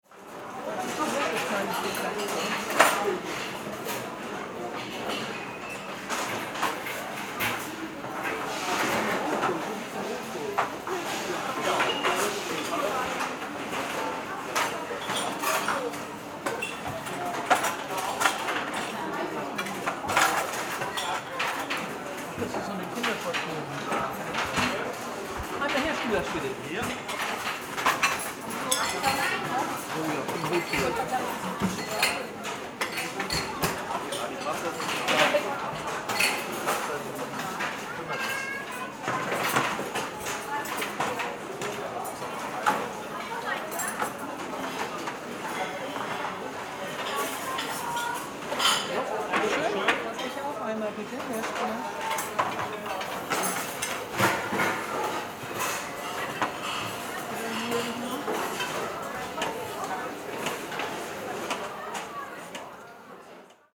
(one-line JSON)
{"title": "FRAPPANT vs. IKEA - Furniture Store- Hamburg Moorfleet, Restaurant.", "date": "2009-11-01 15:24:00", "description": "Official plans of future urban development in Hamburg aim to restructure the Große Bergstrasse in Hamburg-Altona. One aspect of the plan is the construction of a large inner city store by the IKEA corporation on the site of the former department store \"Frappant\", actually used as studios and music venues by artists.\nYou find the sounds of the Ikea furniture store layered on the map of the Frappant building, next to sounds of the existing space.\nOffizielle Umstrukturierungspläne in Hamburg sehen vor das ehemalige Kaufhaus „Frappant“ in der Altonaer Großen Bergstrasse – seit 2006 Ateliers und Veranstaltungsräume – abzureißen und den Bau eines innerstädtischen IKEA Möbelhaus zu fördern. Es gibt eine öffentliche Debatte um diese ökonomisierende und gentrifizierende Stadtpolitik.\nAuf dieser Seite liegen die Sounds von IKEA Moorfleet auf der Karte der Gr. Bergstrasse neben Sounds im und um das Frappant Gebäude. Eine Überlagerung von Klangräumen.", "latitude": "53.55", "longitude": "9.94", "altitude": "34", "timezone": "Europe/Berlin"}